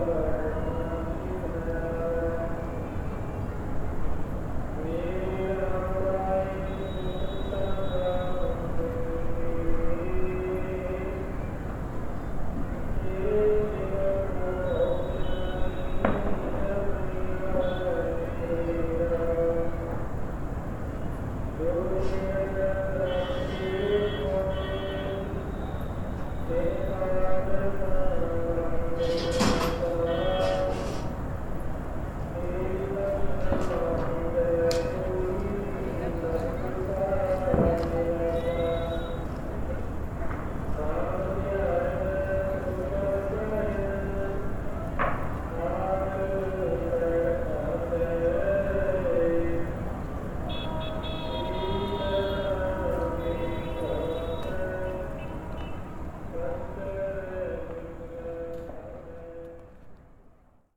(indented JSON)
{
  "title": "Gwalior Fort, Gwalior, Madhya Pradesh, Inde - End of the day atmosphere",
  "date": "2015-10-24 19:23:00",
  "description": "The atmosphere of Gwalior, recorded from the Fort. A very sad melody is sung by a man far away.",
  "latitude": "26.23",
  "longitude": "78.17",
  "altitude": "267",
  "timezone": "Asia/Kolkata"
}